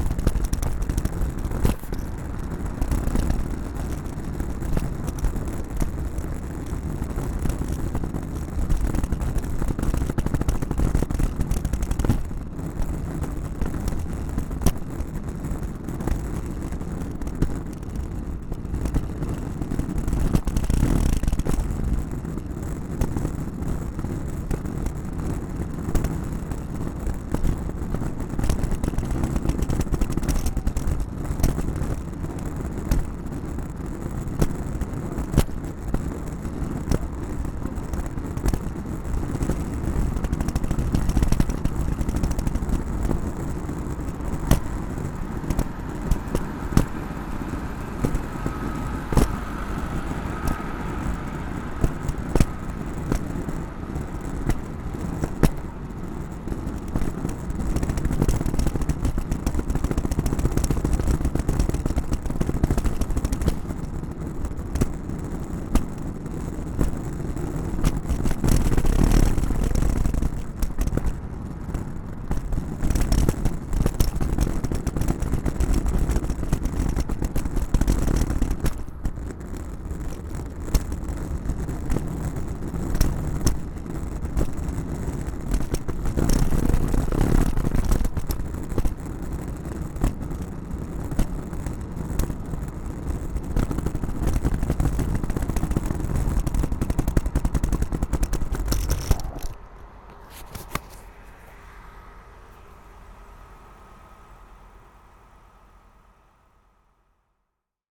Av du Parc, Montréal, QC, Canada - USA Luggage Bag Drag 2
Recorded as part of the 'Put The Needle On The Record' project by Laurence Colbert in 2019.
Montréal, Québec, Canada, 18 September, 15:39